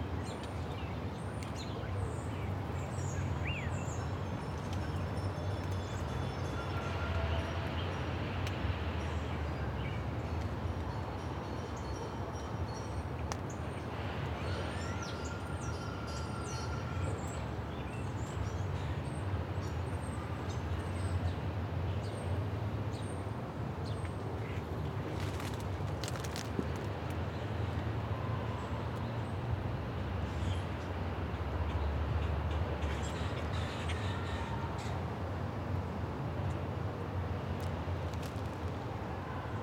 Grandview Ave, Ridgewood, NY, USA - Birds and Squirrels
Sounds of birds and a squirrel hopping around the microphone hiding nuts.
Recorded at Grover Cleveland Playground in Ridgewood, Queens.